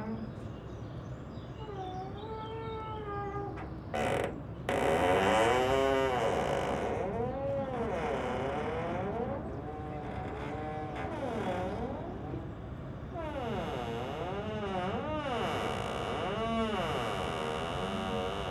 Plänterwald, Berlin, Spree - squeeking landing stage
a little landing stage made a nice squeeking sound
(tech note: SD702, AudioTechnica BP4025)
Berlin, Germany, 22 April